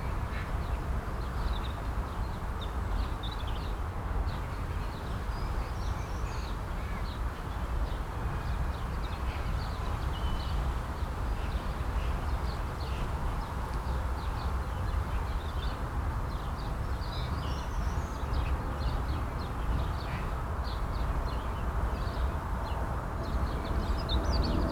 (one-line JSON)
{"title": "Grugapark, Virchowstr. 167 a, Essen, Deutschland - essen, gruga park, pergola garden", "date": "2014-04-08 14:30:00", "description": "Im Gruga Park Gelände am Pergola Garten nahe der Skulptur Kindergruppe von Heinrich Adolfs. Die Klänge der Vogelstimmen, ein Flugzeug kreuzt den Himmel an einem kühlen Frühjahrstag.\nInside the Gruga Park at the Pergola garden near the sculpture child group by Heinrich Adolfs. The sound of the bird voices and a plane crossing the sky.\nProjekt - Stadtklang//: Hörorte - topographic field recordings and social ambiences", "latitude": "51.43", "longitude": "6.98", "altitude": "117", "timezone": "Europe/Berlin"}